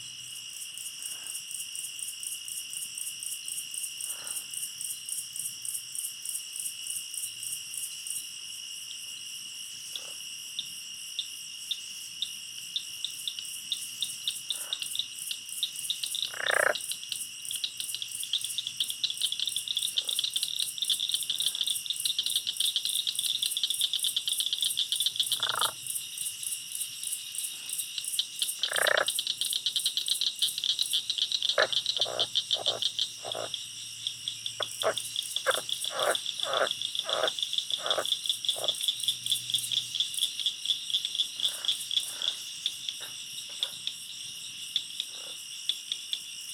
Krause Springs, TX, USA - Gemini Insects, Birds & Reptiles
Recorded with a pair of DPA 4060s and a Marantz PMD661